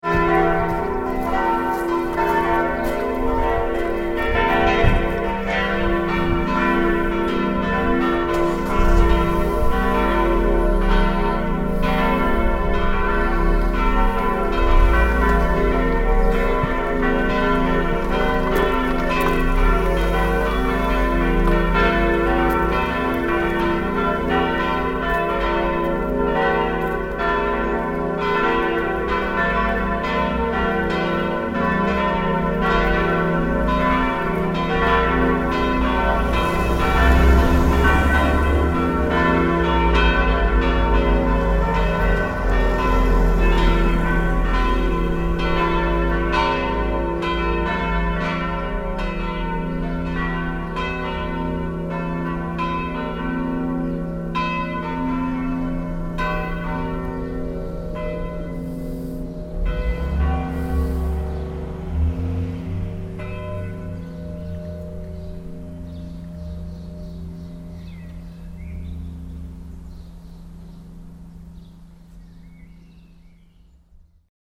flintsbach, church bell
recorded june 6, 2008. - project: "hasenbrot - a private sound diary"
Flintsbach, Germany